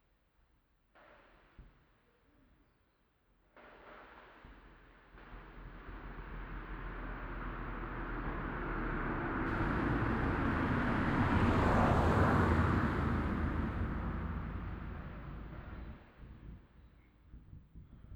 Unter einer Eisenbahnbrücke. Der Klang von vorbeifahrenden Fahrzeugen und Fahrradfahrern auf der Straße und darüber hinweg fahrenden Zügen.
Under a railway bridge. The sound of passing by street traffic and the sound of the trains passing the bridge.
Projekt - Stadtklang//: Hörorte - topographic field recordings and social ambiences
Essen, Germany, May 2014